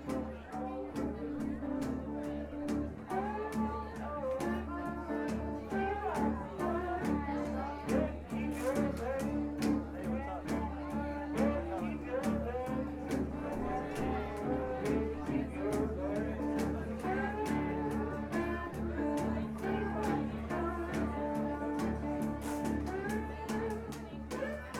Three Muses, Frenchmen Street, New Orleans, Louisiana - Sound of NOLA
Just a taste.. Three Muses on Frenchmen, Luke Winslow King plays, chatterers chatter, sounds of traffic coming through the open door
Tascam DR100 MK2
LA, USA, 6 September 2012, 22:30